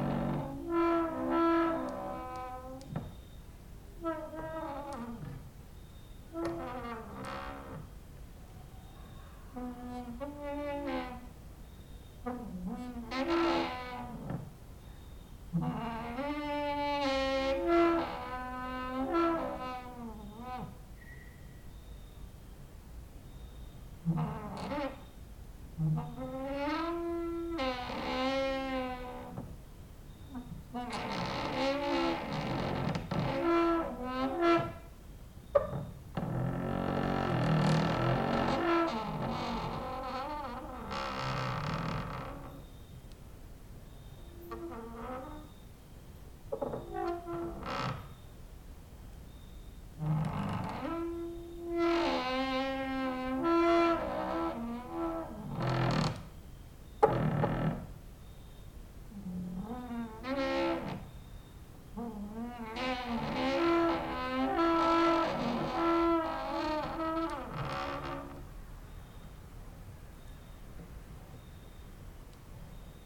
Mladinska, Maribor, Slovenia - late night creaky lullaby for cricket/16
cricket outside, exercising creaking with wooden doors inside